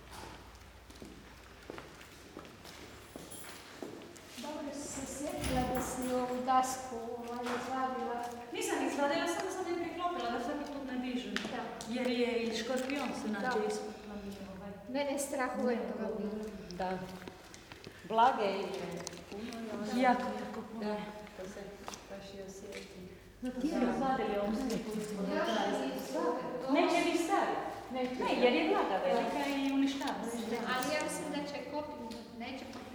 Jurandvor, island Krk, Croatia, St.Lucy's church - neglected "sacred things"
walking towards and entering a small church where the "Bascanska ploca" was discovered(a pluteus with old slavic characters chiselled out in stone, crucial document of Croatian history);since 1100 till 1850 it lay forgotten on the floor;in the decade of strong patriotic pathos (1990-ties) the church was full of moist, scorpions could be seen around; commentaries of 2 women who opened the church for us are related to that subject
20 June 1997, 11:00